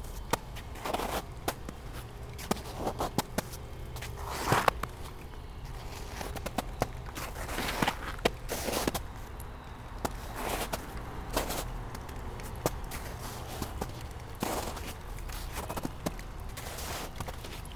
Chapin Ave - melting snow drips and construction noise
some wet, slushy snow melting off a roof and dripping into a puddle at the base of a concrete block wall. I'm walking on what's left of the crunchy snow and ice. We can hear some construction noises reflected off the wall. Recorded with an Olympus LS-10 and LOM mikroUši + windbubbles
Rhode Island, United States, 3 February 2021, 01:00